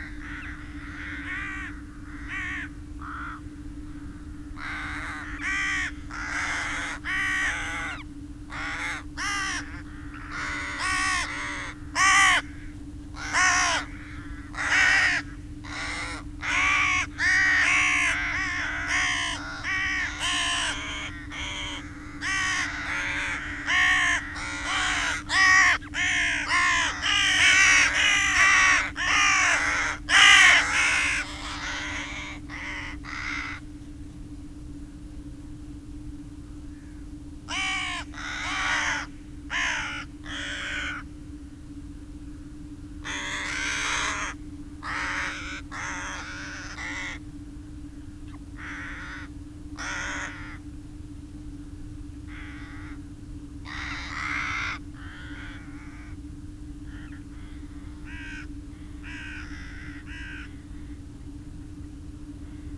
Luttons, UK - crows and rook soundscape ...
Crows and rooks soundscape ... flock flying over then spiralling away ... open lavalier mics on clothes pegs clipped to sandwich box parked on field boundary ... background noise ...